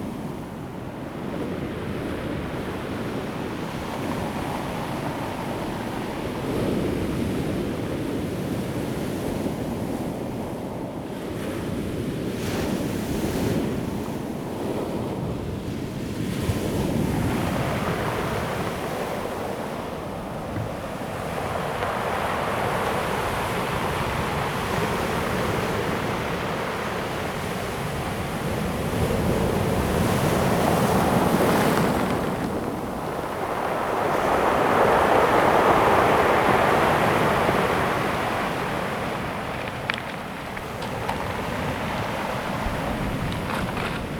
At the seaside, Sound of the waves, Very hot weather
Zoom H2n MS+ XY